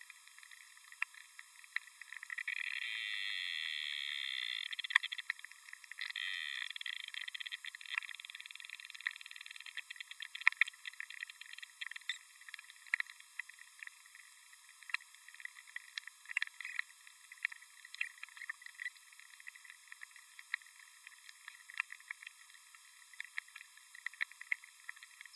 Mathry, Wales, UK - Llangloffan Fen: Pond Life #4
Recorded at Llangloffan Fen Nature Reserve using a Zoom H4 & a JRF hydrophone.
August 2016